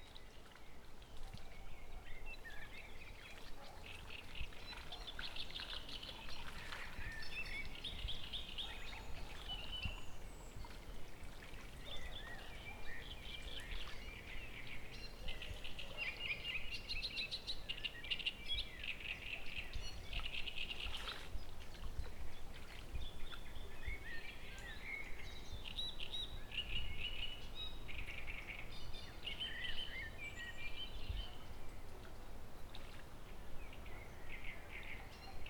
Tuczno near Poznan, Steszewskie Lake
standing at the shore, very close to water, picking up lake and forest ambience